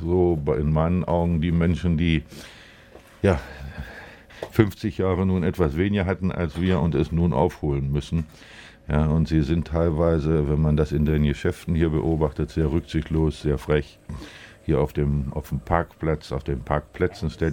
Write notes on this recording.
Produktion: Deutschlandradio Kultur/Norddeutscher Rundfunk 2009